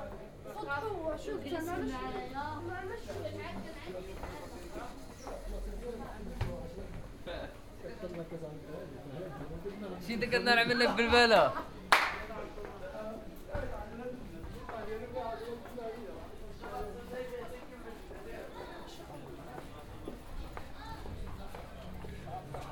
walking from this point into the Medina